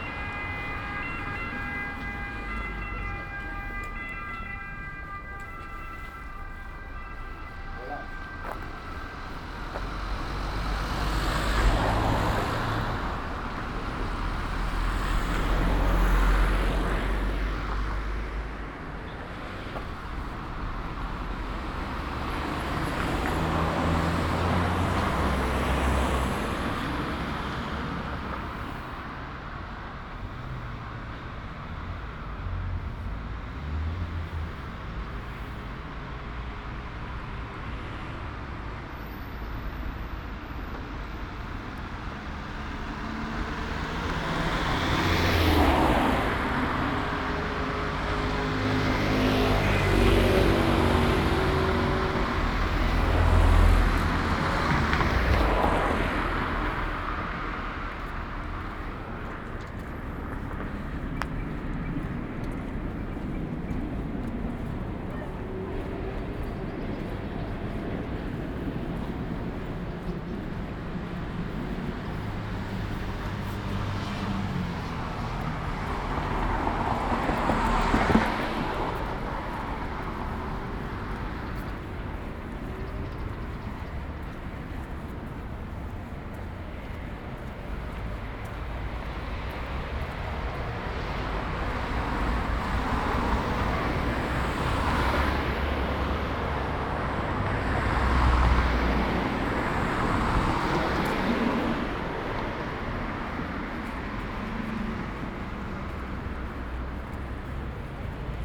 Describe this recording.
Thursday March 19th 2020. San Salvario district Turin, to Valentino, walking on the right side of Po river and back, nine days after emergency disposition due to the epidemic of COVID19. Start at 6:29 p.m. end at 7:15 p.m. duration of recording 46’08”. Local sunset time 06:43 p.m. The entire path is associated with a synchronized GPS track recorded in the (kmz, kml, gpx) files downloadable here: